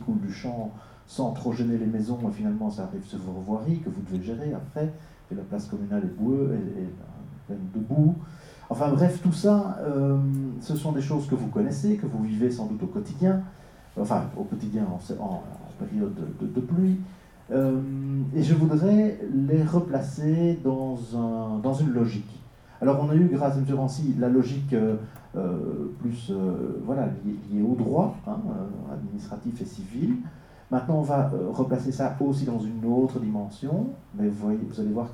Namur, Belgique - Seminar
A seminar about agricultural erosion, flooding and sludge disasters. Orator is very specialized in this thematic.